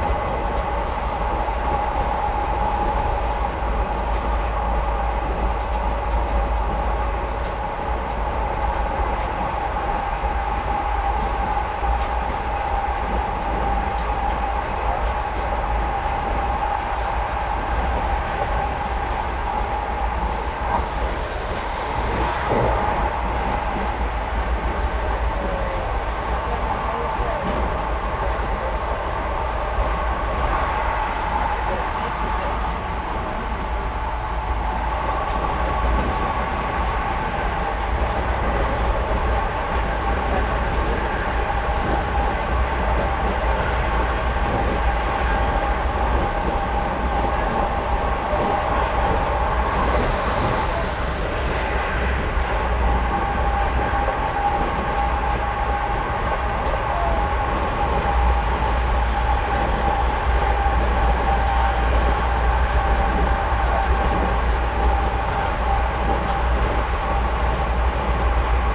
Ingemundebo, Emmaboda, Sverige - Train interieur with open window.
Train interieur with open window. Zoom H6. Øivind Weingaarde.